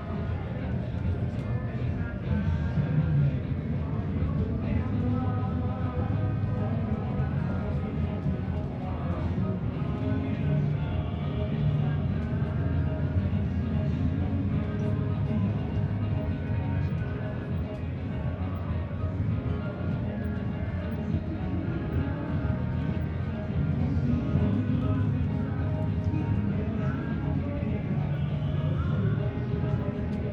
Marina Göcek, Turkey - 918a multiple parties in marina
Recording of multiple parties in the marina after midnight.
AB stereo recording (17cm) made with Sennheiser MKH 8020 on Sound Devices MixPre-6 II.
Ege Bölgesi, Türkiye